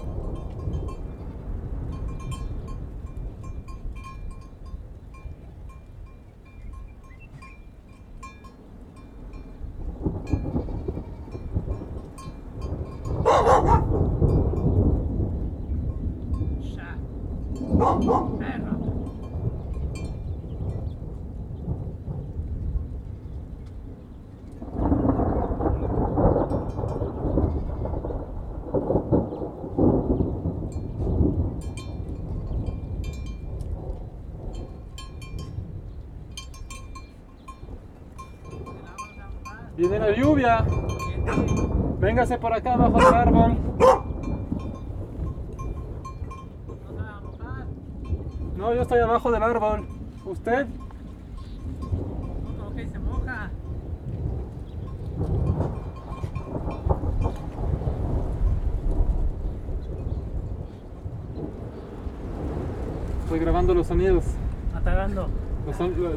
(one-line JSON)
{"title": "Las Narices, Coyotillos - Thunders and sheperd in the mountain Las Narices", "date": "2019-06-17 14:00:00", "description": "At the mountain Las Narices close to Coyotillos, storm is arriving and some thunders are clapping, before the rain. The sheperd and their goats are coming down, and Luz is coming to me at the end to have a chat. Some dogs are barking and coming down with the goats.\nRecorded by a ORTF setup with 2 Schoeps CCM4 Microphones in a Cinela Windshield. On a Sound Devices 633 recorder\nSound Ref MXF190617T10\nGPS 23.315748 -101.184082\nRecorded during the project \"Desert's Light\" by Félix Blume & Pierre Costard in June 2019", "latitude": "23.32", "longitude": "-101.18", "altitude": "2302", "timezone": "America/Mexico_City"}